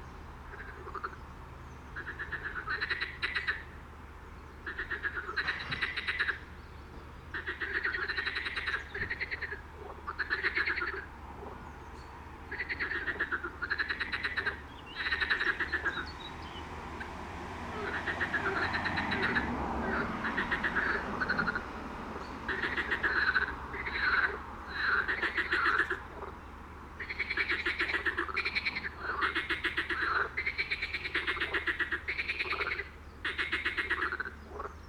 workum: suderséleane - the city, the country & me: frogs
the city, the country & me: june 25, 2015